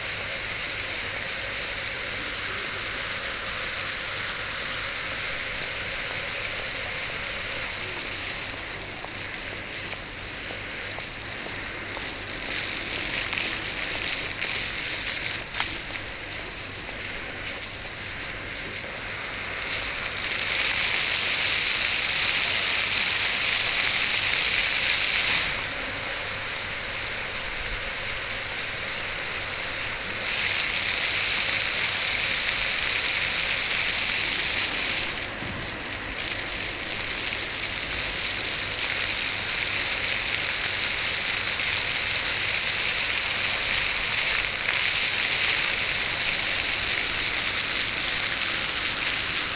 Gyoer, Main Square - Water Music (schuettelgrat)
Water Fountain at the main square in Györ, Hungary